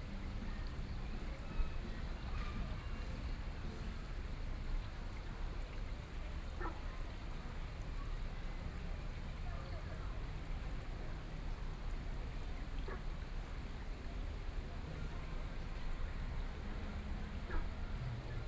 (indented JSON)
{
  "title": "湯圍溝溫泉公園, Jiaosi Township - in Hot Springs Park",
  "date": "2014-07-21 19:18:00",
  "description": "in Hot Springs Park, Frogs sound\nSony PCM D50+ Soundman OKM II",
  "latitude": "24.83",
  "longitude": "121.77",
  "altitude": "15",
  "timezone": "Asia/Taipei"
}